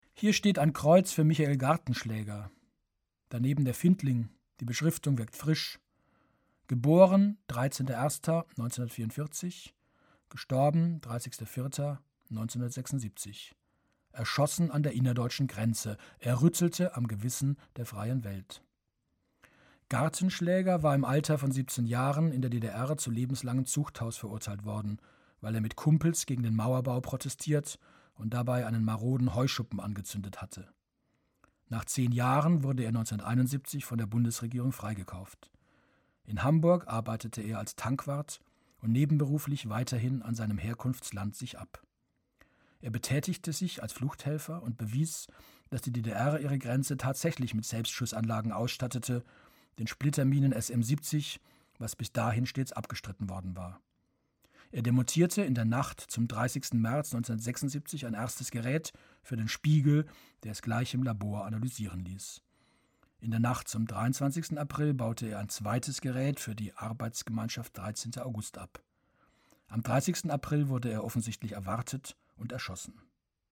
zwischen broethen & leisterfoerde - gedenkstein im wald
Produktion: Deutschlandradio Kultur/Norddeutscher Rundfunk 2009